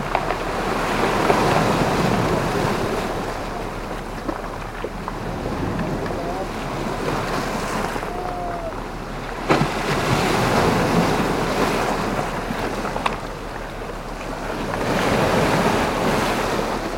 Ulleung-eup foreshore - Ulleung-do foreshore
at the edge of an ancient volcano that rises sharply from the East Sea